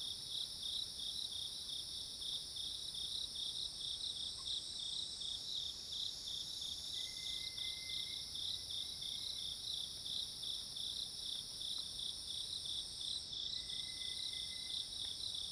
Hualong Ln., Yuchi Township, 南投縣 - Facing the woods
Insects called, Birds call, Cicadas cries, Facing the woods
Zoom H2n MS+XY
Puli Township, 華龍巷164號